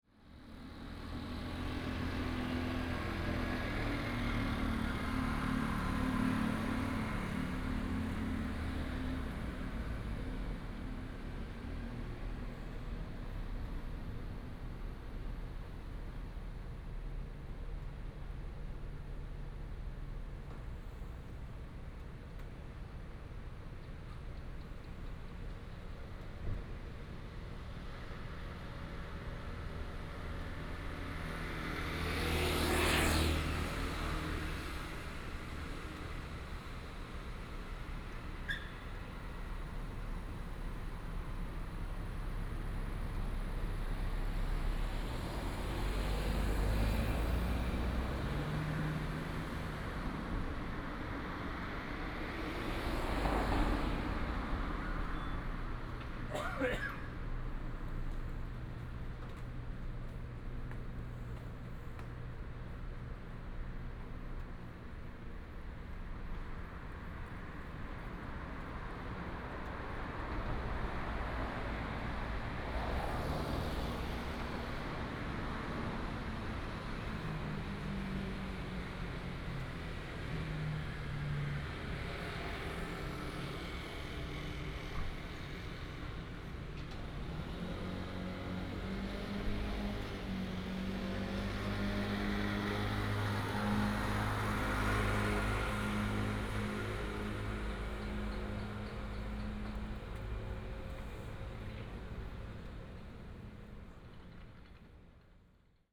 昶玖門市, Gushan Dist., Kaohsiung City - Late night street
Late night street, Traffic sound